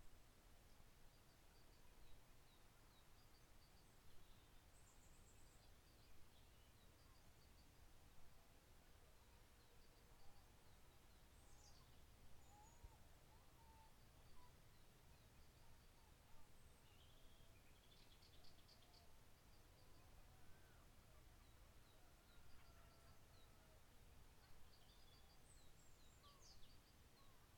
A sunny day at Kaiser 's Throne
ZOOM: H4N
Kerkira, Greece